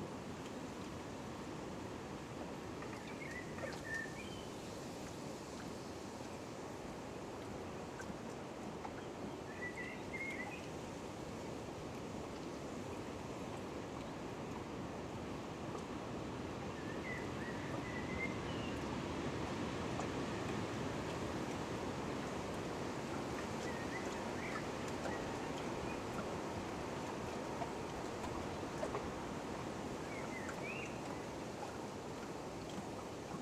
Antakalnis, Lithuania, on the bridge
small microphones hidden between the boards of the bridge - to hide from strong wind
Trakų rajono savivaldybė, Vilniaus apskritis, Lietuva